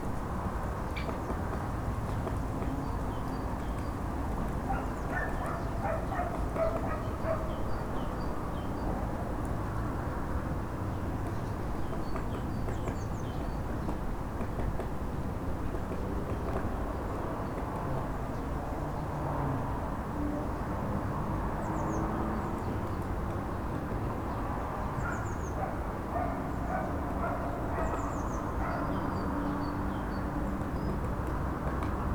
woodpecker begins his work
the city, the country & me: february 8, 2012